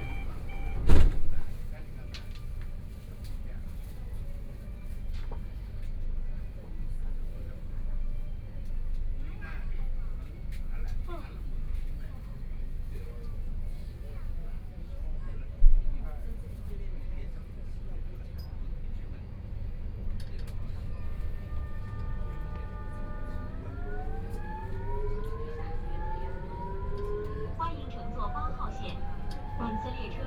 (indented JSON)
{
  "title": "South Xizang Road, Shanghai - Line 8 (Shanghai)",
  "date": "2013-11-28 13:14:00",
  "description": "from Laoximen Station to South Xizang Road Station, Binaural recording, Zoom H6+ Soundman OKM II",
  "latitude": "31.22",
  "longitude": "121.48",
  "altitude": "11",
  "timezone": "Asia/Shanghai"
}